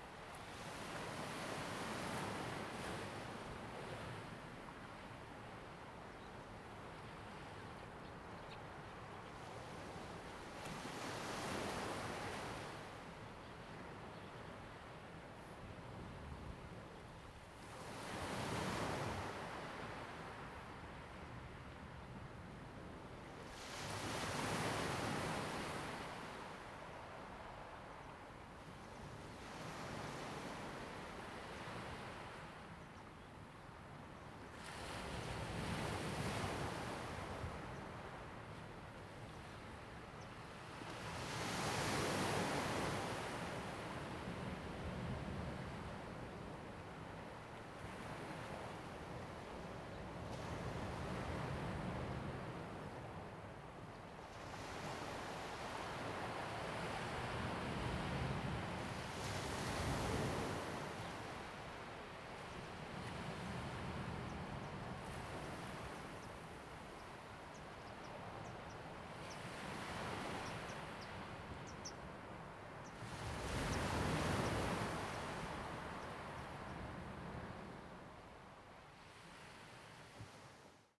Ponso no Tao, Taiwan - In the beach
sound of the waves, In the beach
Zoom H2n MS +XY